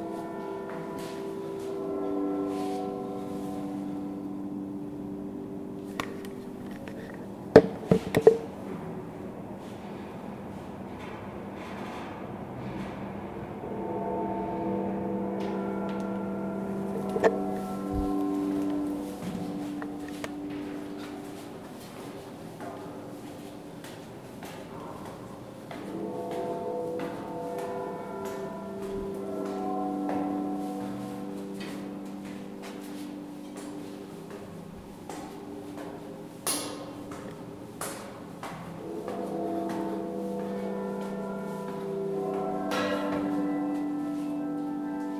Downtown Commercial, Calgary, AB, Canada - Noon Bells in the Calgary Tower Stairwell
This is a recording of the emergency staircase of the Calgary Tower at Noon, when the Carillon Bells toll and play music. The stairs are next to elevator and the sound of it passing by, through the walls, can be heard.
5 June 2015